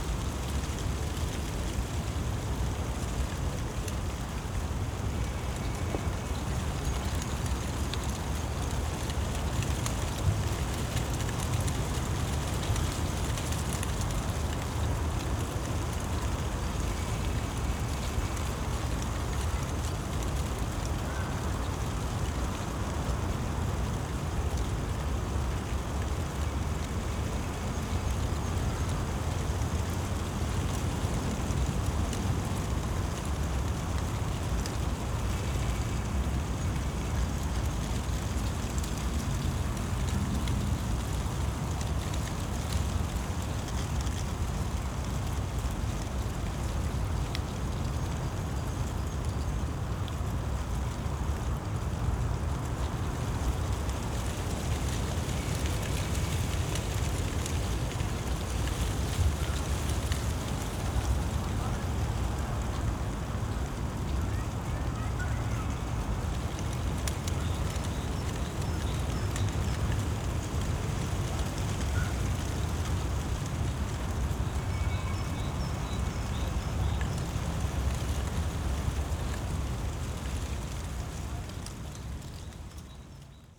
Tempelhofer Feld, Berlin, Deutschland - dry leaves in the wind, city hum
place revisited on a cold spring day, remains of snow all around, constant wind from the north amplifies the city hum, dry leaves and branches of poplar trees.
(SD702, Audio Technica BP4025)
Berlin, Germany